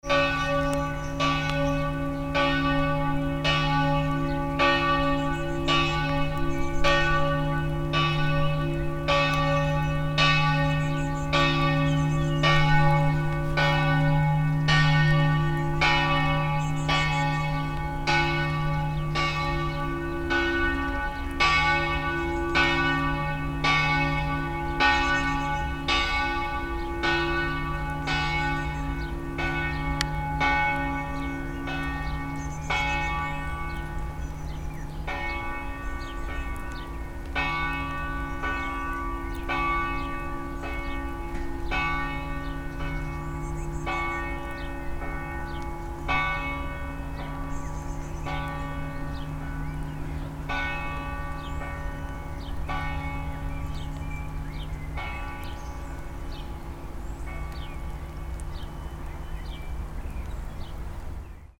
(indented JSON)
{
  "title": "Trsat, Gradina, Zvono",
  "date": "2008-05-12 19:00:00",
  "description": "Bell ringing @ Trsat (Rijeka, HR).",
  "latitude": "45.33",
  "longitude": "14.46",
  "altitude": "129",
  "timezone": "Europe/Zagreb"
}